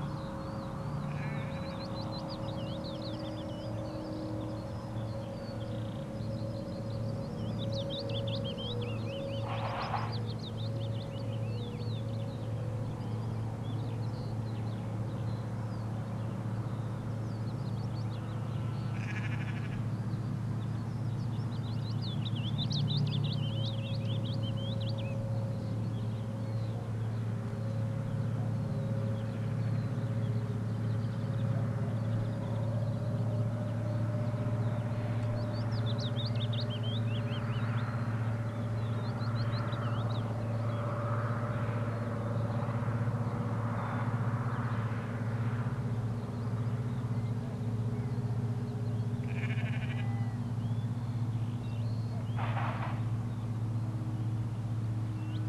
Pause Cellos
A circle of 24 wood and steel cello bodies and drums with extra long necks. each has one string.Distant cattle grid and Cumbrian sheep.